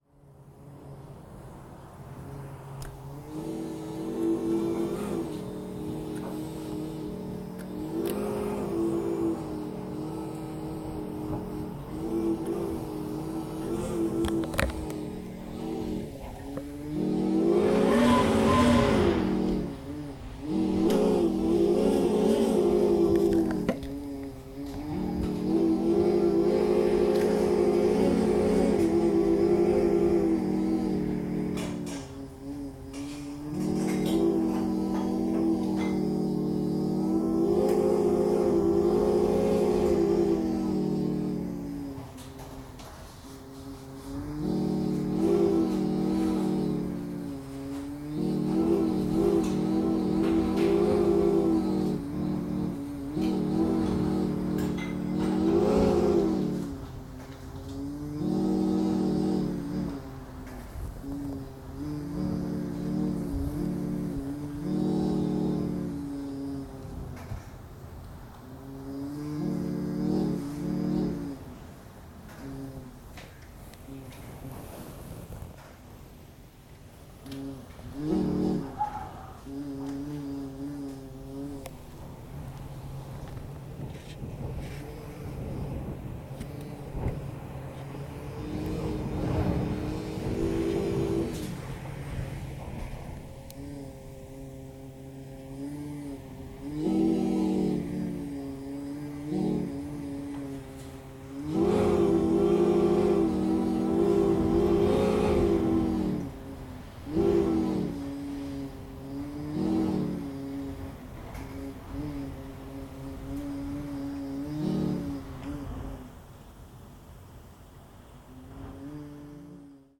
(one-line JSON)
{"title": "Shap, UK - Moaning wind", "date": "2022-01-01 19:20:00", "description": "Window moaning in stormy weather. Zoom H2N", "latitude": "54.52", "longitude": "-2.67", "altitude": "260", "timezone": "Europe/London"}